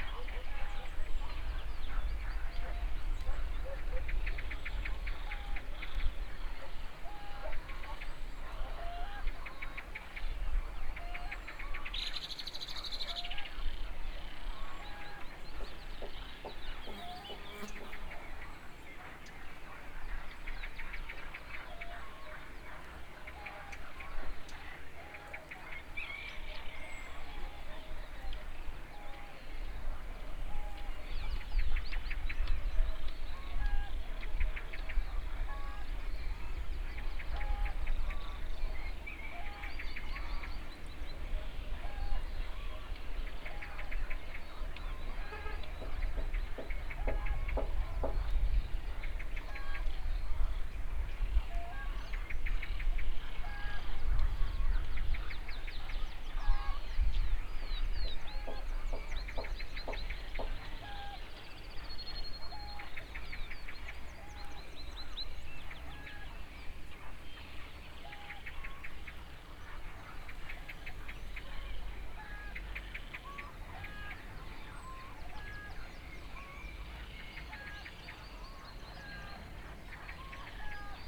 (binaural) rich, peaceful ambience of a vast valley west from Funchal, overlooking Campanario.
Campanario, Portugal, 2 May 2015